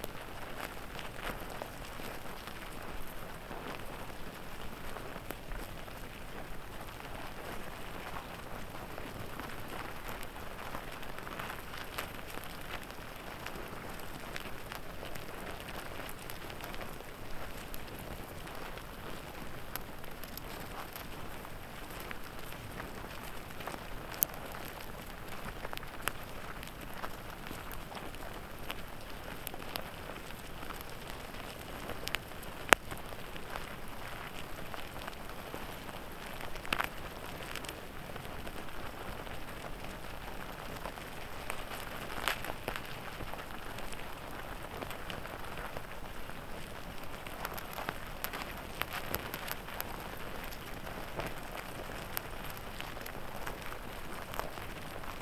Šventupys, Lithuania, anthill activity
Anthill activity recorded with diy "stick" contact microphone
Utenos apskritis, Lietuva